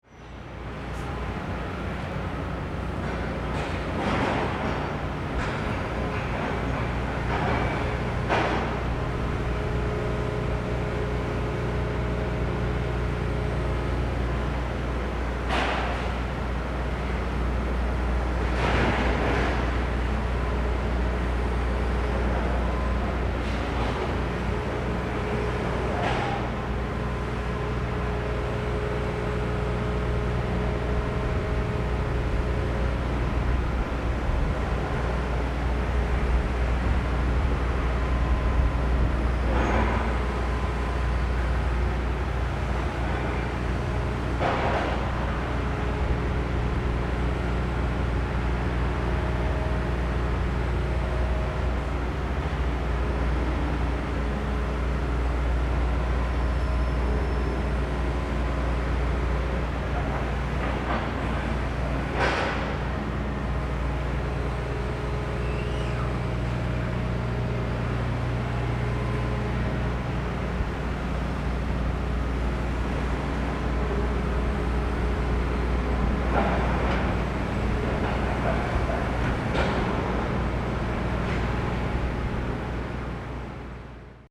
Ln., Yuanshan Rd., Zhonghe Dist. - Construction Sound
Building site, Construction Sound
Sony Hi-MD MZ-RH1 +Sony ECM-MS907
16 February, 17:59